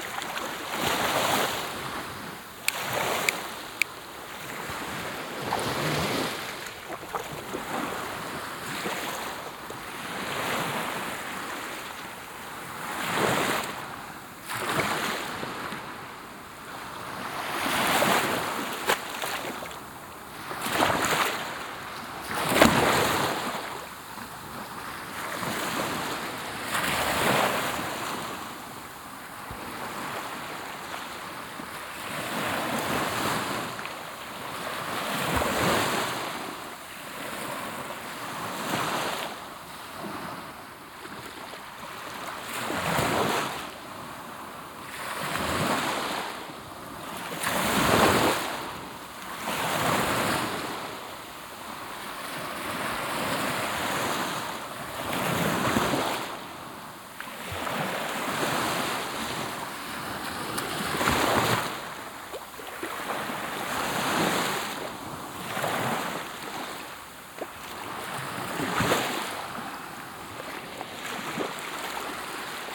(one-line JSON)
{"title": "Waves at Playa Muchavista, Alicante, Hiszpania - (12) BI Waves, really close", "date": "2016-11-04 16:08:00", "description": "Binaural recording of waves, while sitting in the water.\nZoomH2, Soundman OKM", "latitude": "38.42", "longitude": "-0.39", "timezone": "Europe/Madrid"}